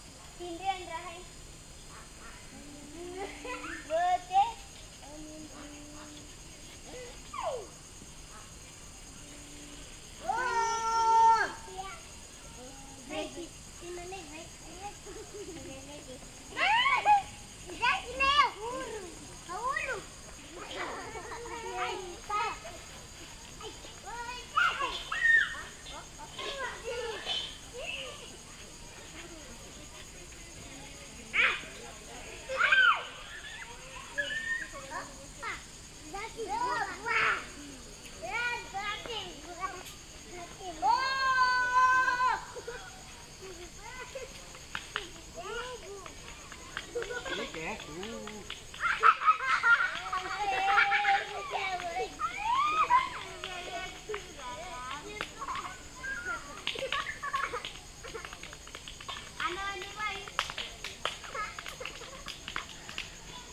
Tsingy de Bemaraha Strict Nature Reserve, Madagaskar - kids playing near Tsingy de Bemaraha Strict Nature Reserve
kids playing near Tsingy de Bemaraha Strict Nature Reserve
Province de Mahajanga, Madagascar, 5 February 2006